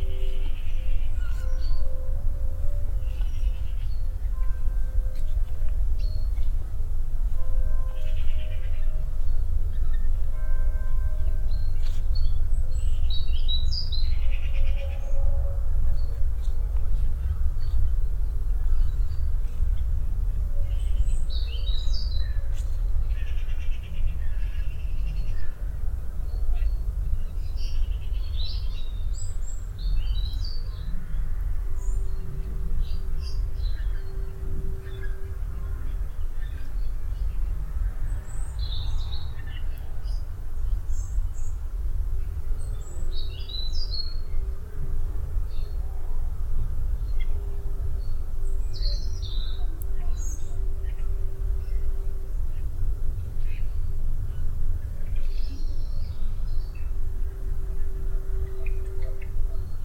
ooij, hotel garden
Early Fall. Walking in the garden of the Oortjeshekken Hotel in the early morning. The sound of several bird voices including wild gooses that gather on a nearby meadow. In the distance church bells and the sound of planes and traffic passing by
international village scapes - topographic field recordings and social ambiences
Ooij, The Netherlands, 4 November 2011, 15:18